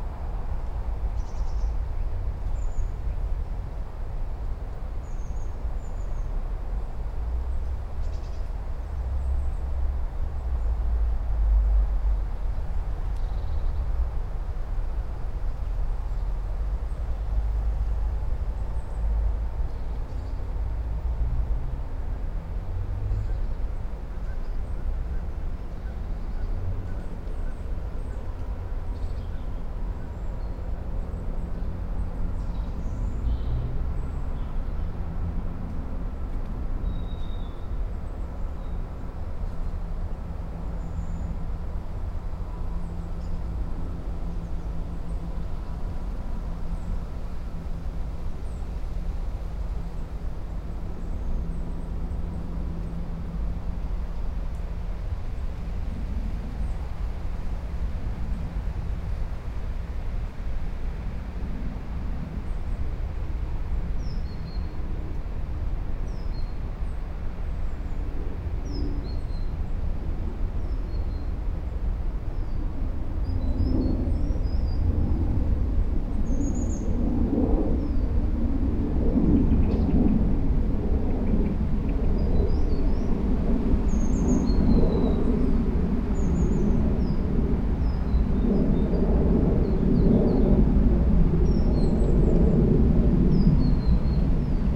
Toll House, High St, Whitchurch-on-Thames, Reading, UK - St Marys Churchyard, Whitchurch-on-Thames
Birdsong, wind in the surrounding trees, the rumble of traffic crossing the nearby toll bridge, trains passing along the mainline to London, aircraft and a group of ramblers (Spaced pair of Sennheiser 8020s on a SD MixPre6).